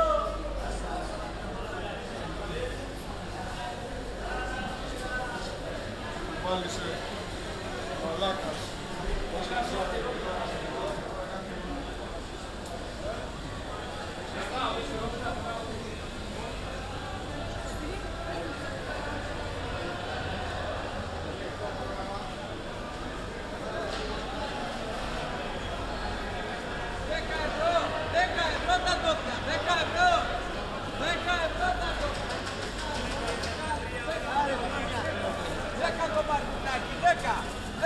Athens. Varvakios Agora - Central meat and fish market.